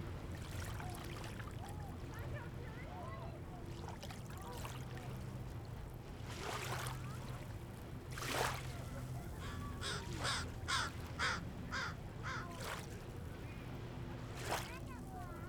Vancouver, BC, Canada - Seawall - Second Beach